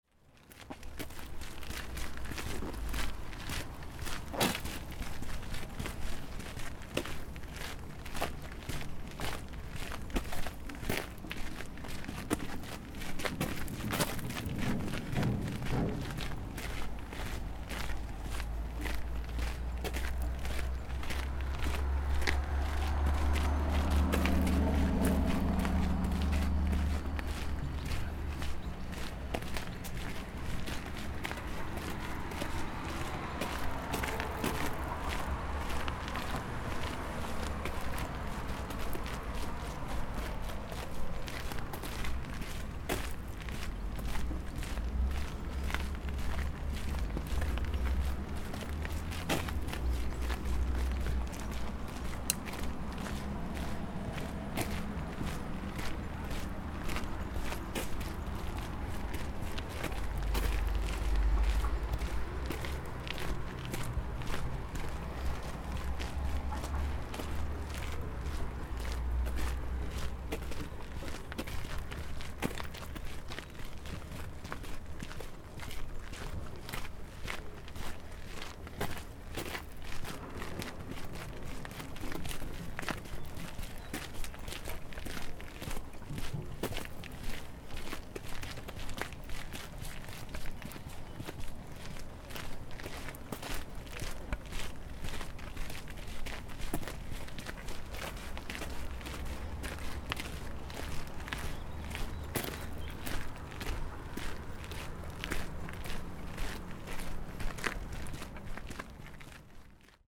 Lago di Poschiavo, Rundwanderung
Lago die Poschiavo, Weg neben Rhätischer Bahn, Weltkulturerbe